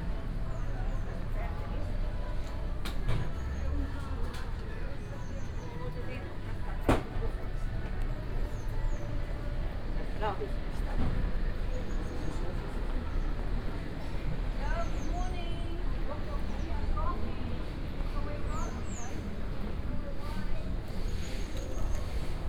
Ribeira Brava, back street in downtown - around the block
(binaural) walking around the backstreets in downtown of Riberia Brava. Sounds comming from different shops, cafes, businesses, radios and workshops.